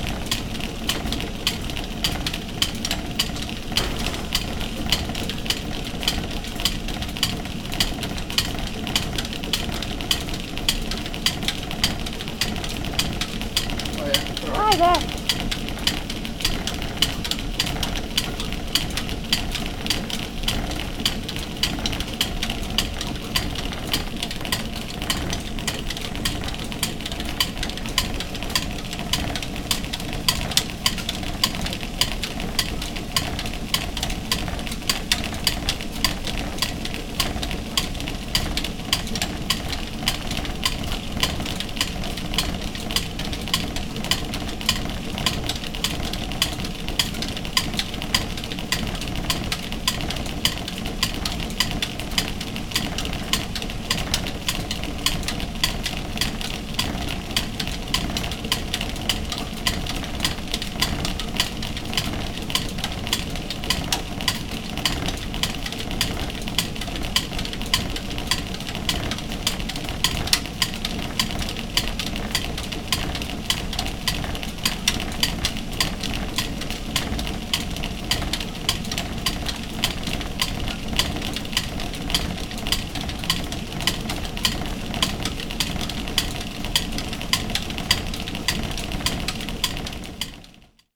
Uffculme, Devon, UK - Spinning machinery at Coldharbour Mill
This is the sound of some of the spinning machinery working at Coldharbour Mill, where hand-knitting yarns are still produced today.